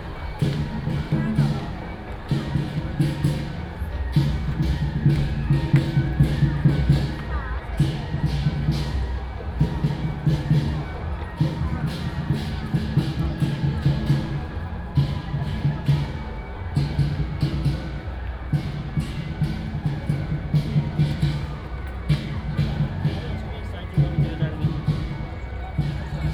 Yi 1st Rd., 基隆市仁愛區 - Traditional and modern variety shows
Festivals, Walking on the road, Traditional and modern variety shows, Keelung Mid.Summer Ghost Festival
Keelung City, Taiwan, 16 August, 20:30